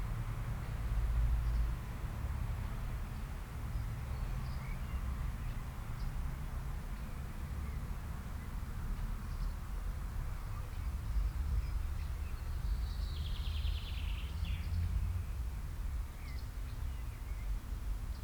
friedhof, binaural, listen with headphones! - friedhof, binaural
binaural, listen with headphones, friedhof niederlinxweiler, st.wendel cemetary, cemetiero, saarland, vögel, auto